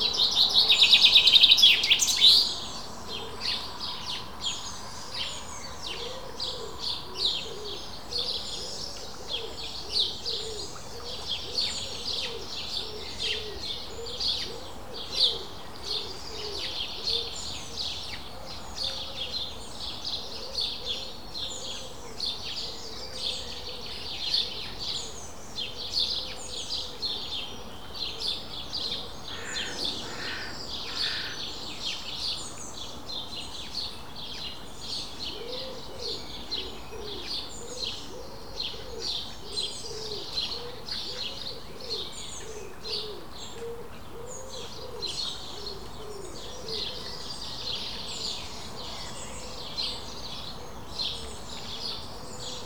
River Frome, Dorchester, UK - Sounds of the riverside on a Sunday morning
Sat on a bench at 5.45 on a Sunday morning. A dog walker passes and says something. Other sounds are wildlife and the A35 in the distance.